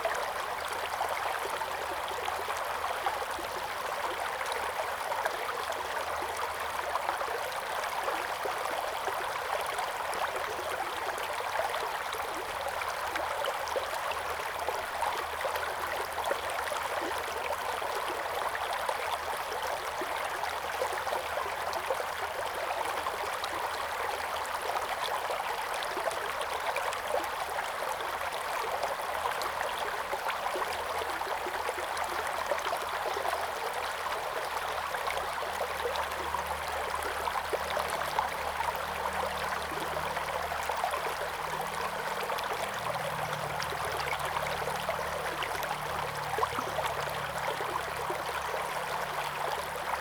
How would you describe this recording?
Flow sound, birds sound, Zoom H2n MS+XY